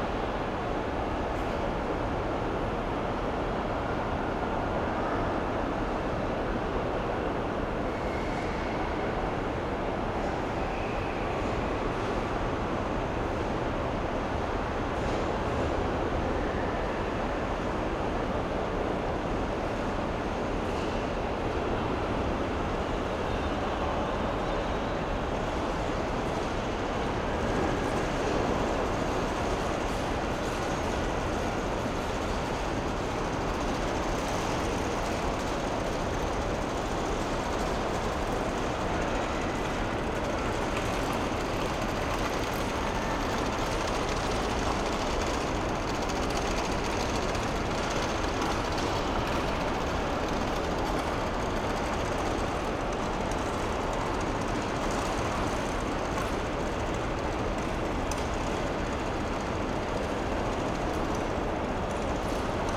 porto airport - airport hall morning ambience
had to spent half the night at porto airport, more or less sleeping on a bench. sound of the almost empty hall at morning
Maia, Portugal, 17 October